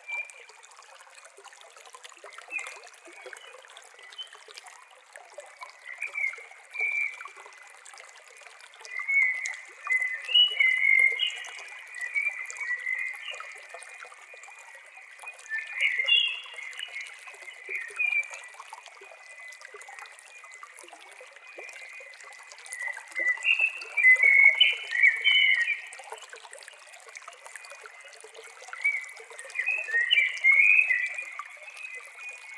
Podmelec, Most na Soči, Slovenia - Simple Valley Stream Water Sounds and Bird Singing
Field recording in the valley of pure stream water sounds and bird singing.